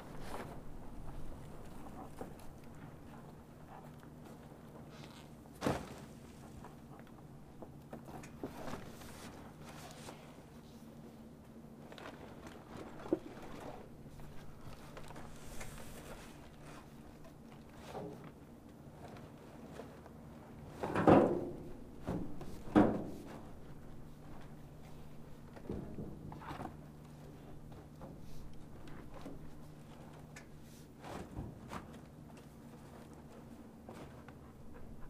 WHOA! i didnt know until just now that this recording started at 11:11 !!:!!
zoomh4npro